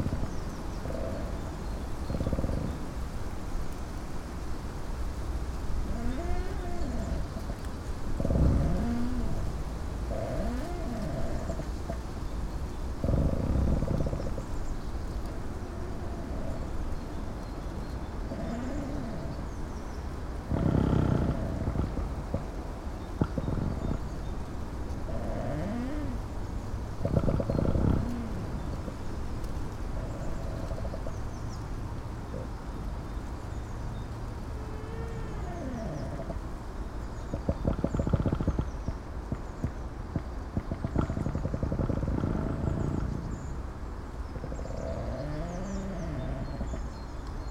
two trees, piramida - creaking trees
strong wind, beautiful creaking
Maribor, Slovenia, 8 March 2013